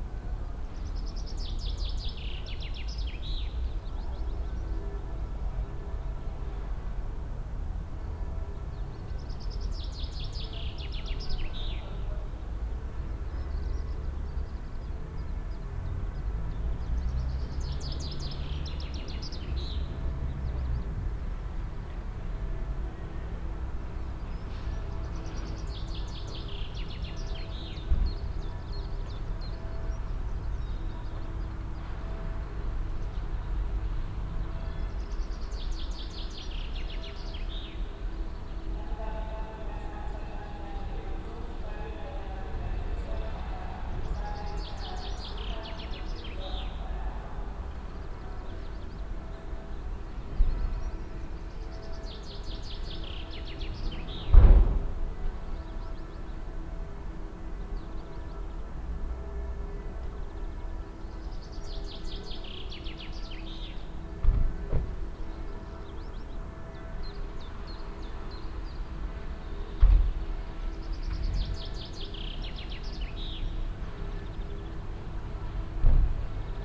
unna, breitenbach areal, at the railway tracks
eight o'clock in the morning of a sunny spring day, the church bells of the town in the distance - trains passing by - announcements of the near station in the distance
soundmap nrw - social ambiences and topographic field recordings
hellweg, breitenbach gelände, 19 April 2010, ~12:00